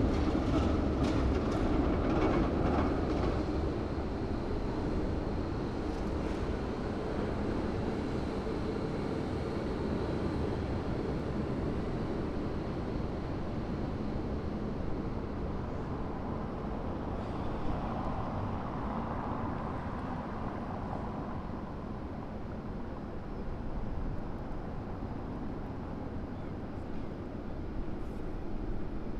Kruununhaka, Helsinki, Finland - Square ambience
Trams passing by, square echo